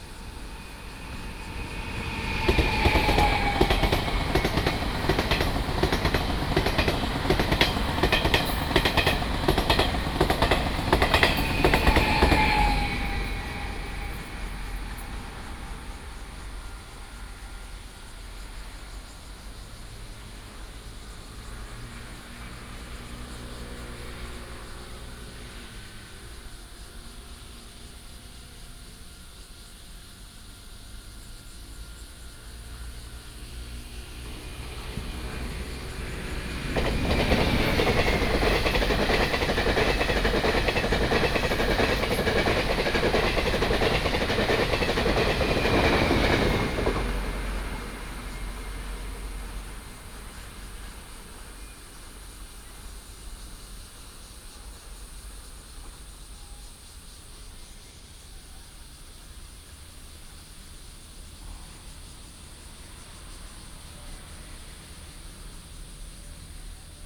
Min’an Rd., Yangmei Dist. - Evening
Cicada sound, train runs through, Traffic sound, Insects sound